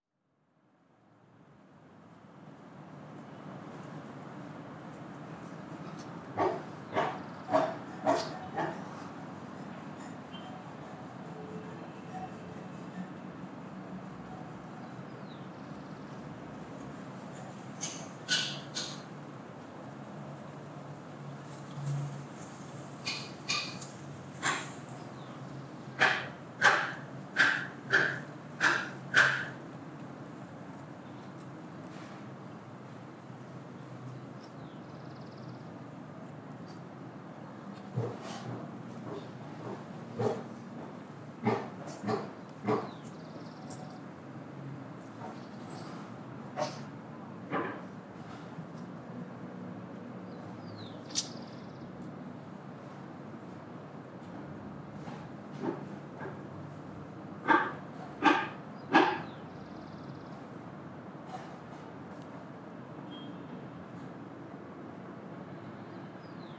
Diagonal 39b Sur, Bogotá, Colombia - Residential area New Villa mayor
3:00 pm
Portal de las villas residential complex, new villa mayor neighborhood, In the distance you can hear the noise of the city, while more closely, two little birds singing and answering each other, in addition to that a worker is sawing a table of wood.